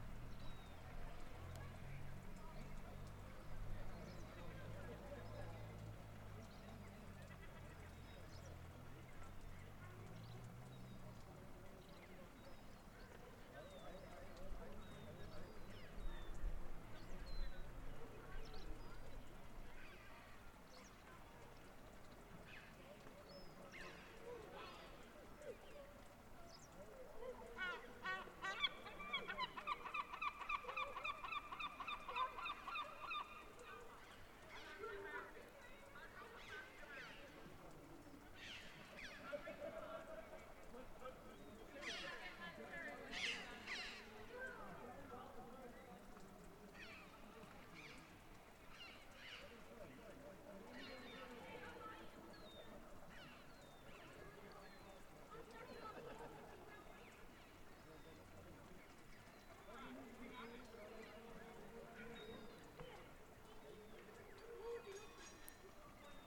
Oileán Chléire, North Harbour - North Harbour, Cape Clear Island
Sunset on a beautiful day on Cape Clear after the last boat has left for the evening.
Mothers shouting at their kids in Irish, seagulls and someone accidentally smashing a wine glass. A nice little snippet of life on Oileán Chléire.
Recorded with an Audio Technica AT2022 onto a Zoom H5.
County Cork, Munster, Ireland, 20 August 2020